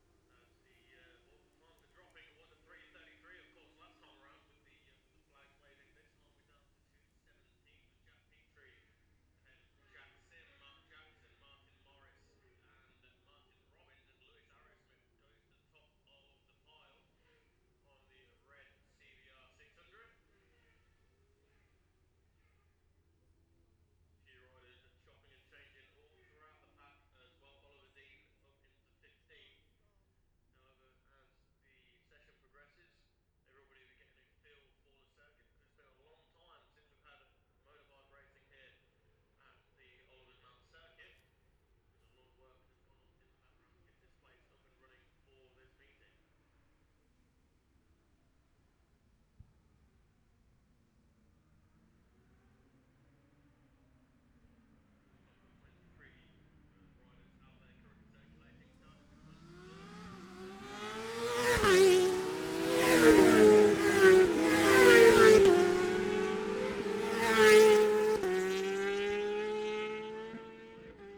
Jacksons Ln, Scarborough, UK - gold cup 2022 ... 600 practice ...
the steve henshaw gold cup 2022 ... 600 group one practice ... dpa 4060s clipped to bag to zoom h5 ... red-flagged then immediate start ...